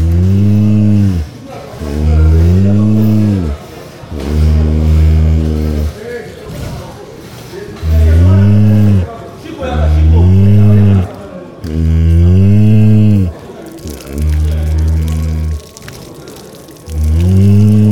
Kinshasa, RDC - The last breath of a cow (Kinshasa, Congo)
The last breath of a cow in a slaughterhouse of Kinshasa.
Recorded by a MS setup Schoeps CCM41+CCM8 on a 633 Sound Devices Recorder
May 2018, Kinshasa, RDC
GPS: -4.372435 / 15.359457